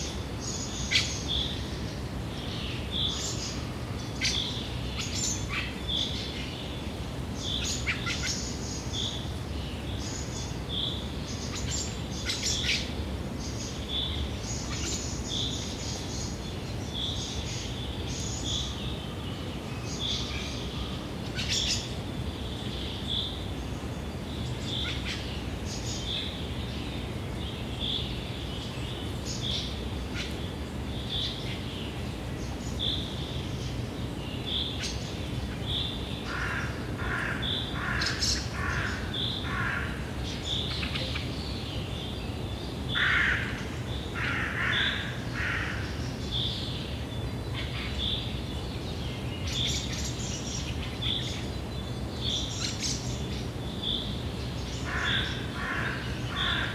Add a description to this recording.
Active birds' life in that fine day. Birds are screaming, talking, flying and walking around. Many voices of various birds. Recorded with Zoom H2n 2ch surround mode